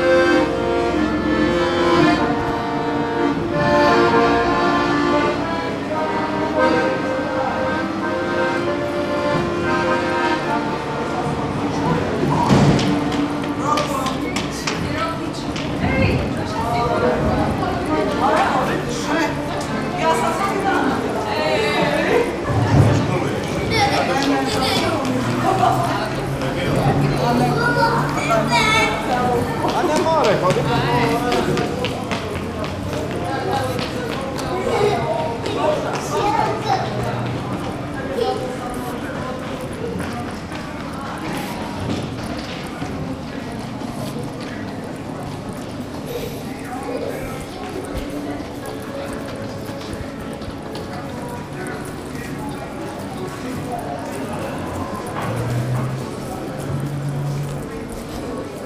Široka ul., Zadar, Croatia - Kalelarga
the main street in the old part of town ... the truck takes out the garbage cans ... the street player plays the accordion ...